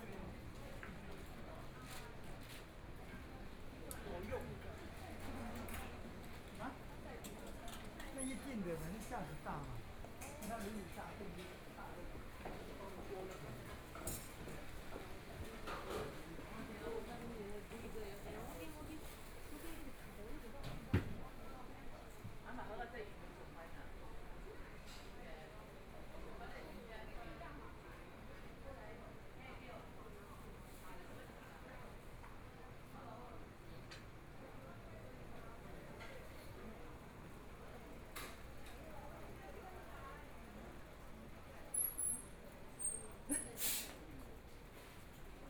晴光商圈, Taipei - Night market
walking in the Night market, Traffic Sound, Binaural recordings, Zoom H4n+ Soundman OKM II
Taipei City, Taiwan, 6 February